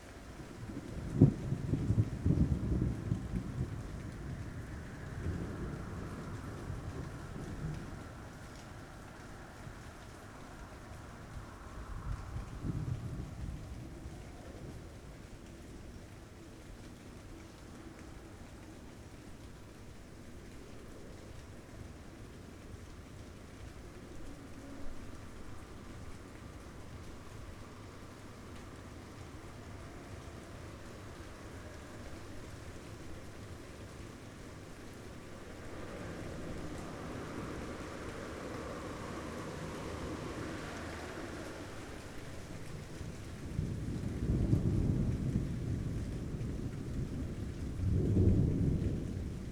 Maribor, Slovenija - Tomšičev drevored at night
A storm is on its way away from the city, still greeting with thunder and rain. Some night riders disturb the late night recording.
Maribor, Slovenia, 10 June